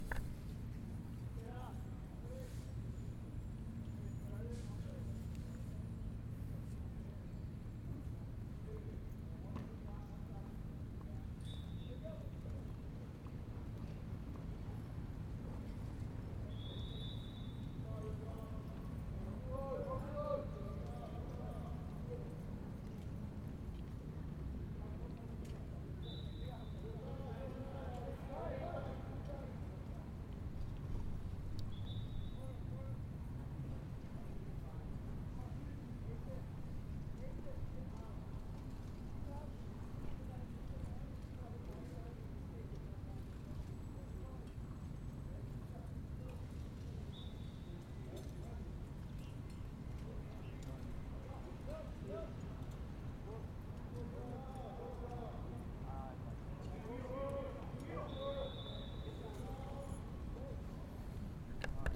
Pollock Theater, Isla Vista, CA, USA - Pollock Theater Lawn
This is a recording from the lawn outside of Pollock Theater while water polo practice and classes are going on.
California, USA, 16 October 2019, 11:20